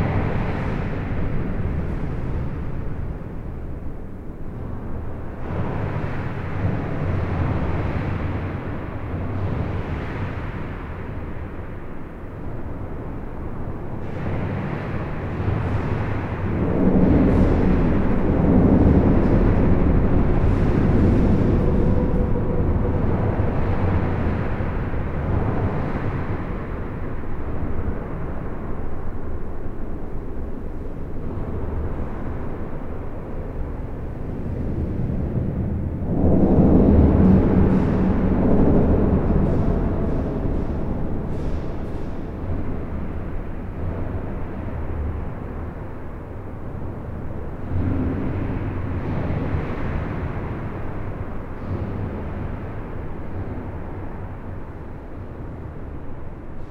Namur, Belgique - The viaduct
This viaduct is one of the more important road equipment in all Belgium. It's an enormous metallic viaduct on an highway crossing the Mass / Meuse river. All internal structure is hollowed.
This recording is made inside the box girder bridge, which is here in steel and not concrete. Trucks make enormous explosions, smashing joint with high velocity and high burden. Infrasounds are gigantic and make effects on the human body, it's sometimes difficult to sustain.
It was very hard to record as everything terribly vibrate and drowned into infrasound strong waves, but an accomplishment. Flavien Gillié adviced me about this kind of recording, in a smaller structure, and thanks to him. It was a dream to record this mythical box girder.
19 April 2016, 07:45